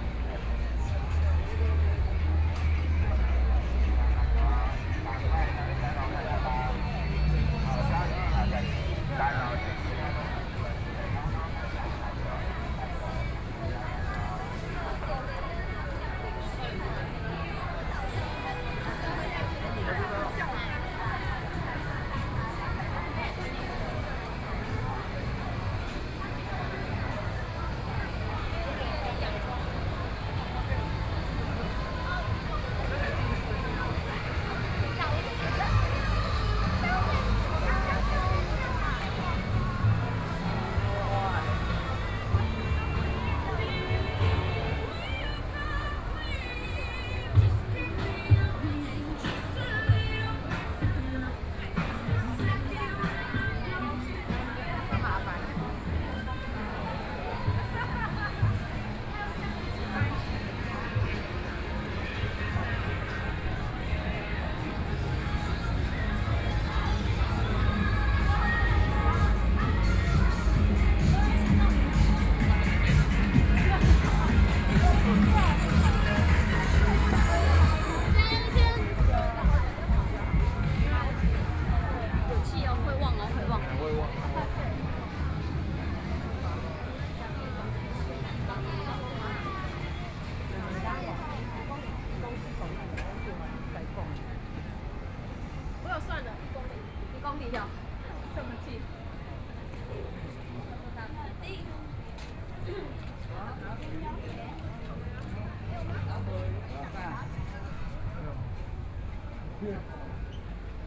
新崛江商圈, Kaoshiung City - Walking in the district
Walking in the district, Many young people shopping district
Xinxing District, Kaohsiung City, Taiwan, 15 May 2014, ~20:00